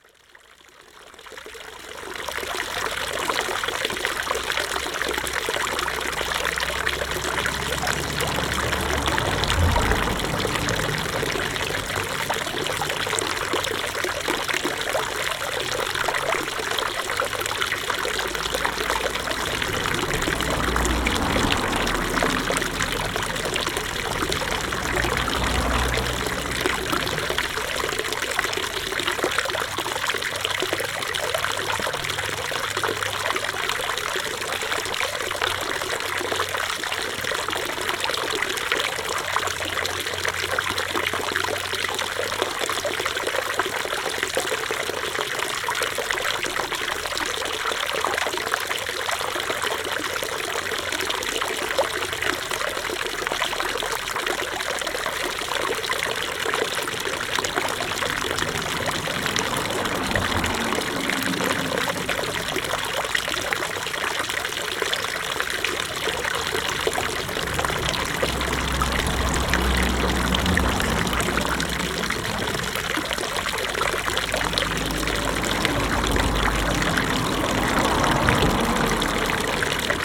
Rte d'Aix, Chindrieux, France - Bassin fontaine
Le bassin fontaine de la côte de Groisin, sauveur des cyclistes assoiffés. Construit en 1912 c'est l'année de la publication du "manifeste des bruits" par Luigi Russolo.